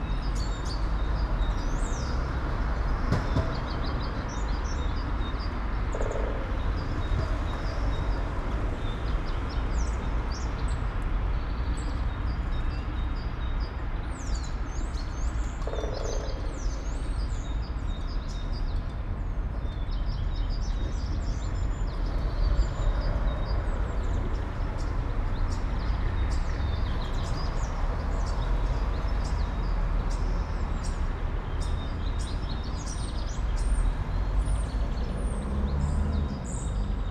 all the mornings of the ... - apr 15 2013 mon